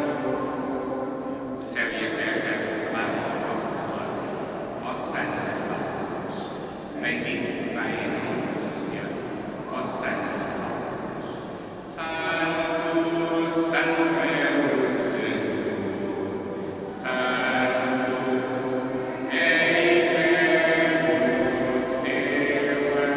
Lisbon, Portugal - Sao Domingos Church
Sao Domingos Church, Lisbon.